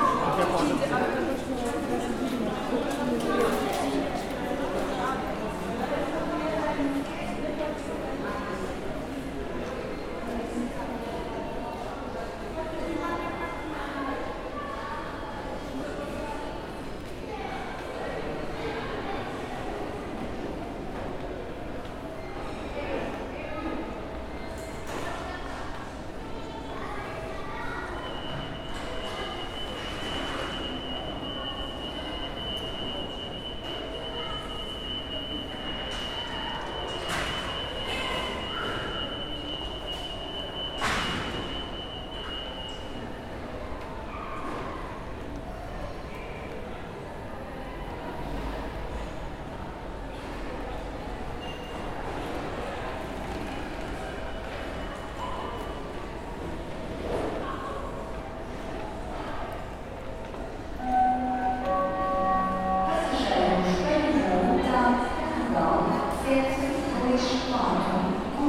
Recording of an airport ambiance.
Recorded with Zoom H4
Barcelona, Catalunya, España, 5 August 2021